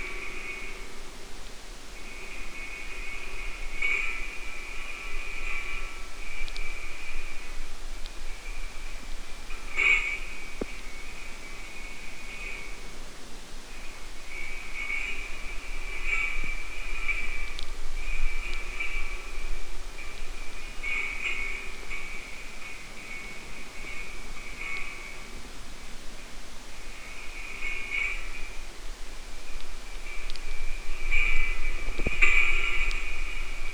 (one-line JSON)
{"date": "2022-09-01 21:00:00", "description": "incandescent filament...tungsten filament in a failed 60W light bulb...", "latitude": "37.85", "longitude": "127.75", "altitude": "101", "timezone": "Asia/Seoul"}